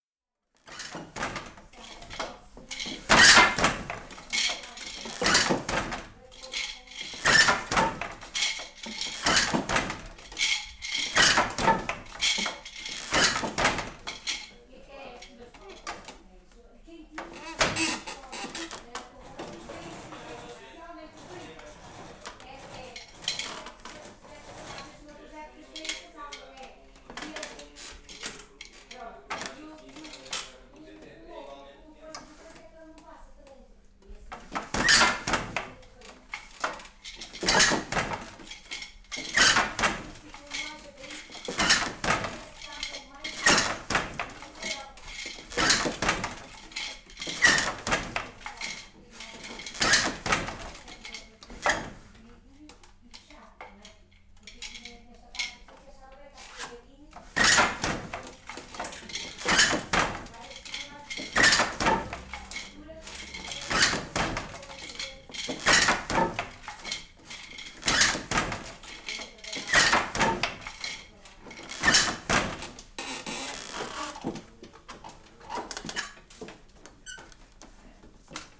Tear das Capuchinas em Campo Bemfeito, Castro dAire, Portugal. Mapa Sonoro do Rio Douro. Working loom in Castro dAire, Portugal. Douro River Sound Map.